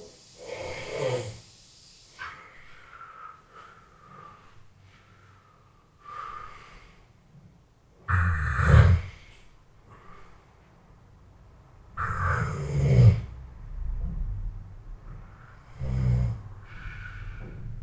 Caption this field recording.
Recording of a snoring concert in the middle of the night. Recorded with Zoom H4